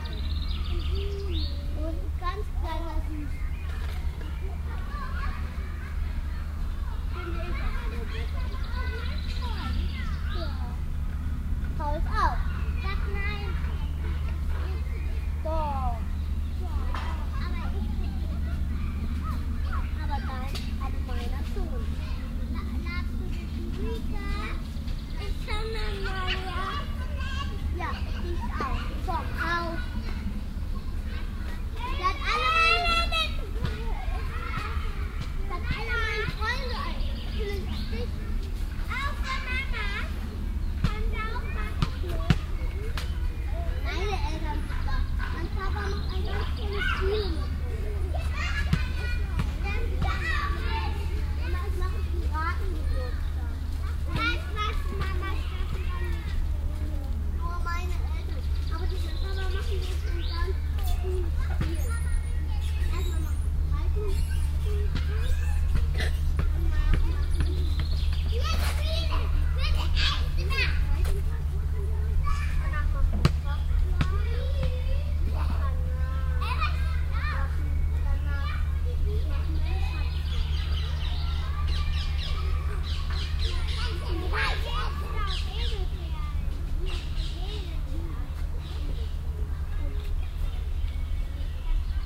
cologne, stadtgarten, kindergarten st alban - cologne, stadtgarten, kindergarten st alban, spielgelände
stereofeldaufnahmen im mai 08 - morgens
project: klang raum garten/ sound in public spaces - in & outdoor nearfield recordings
May 2008